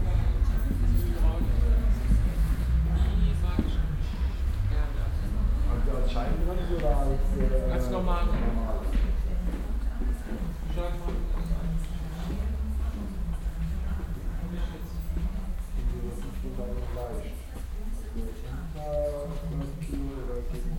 soundmap nrw - social ambiences and topographic field recordings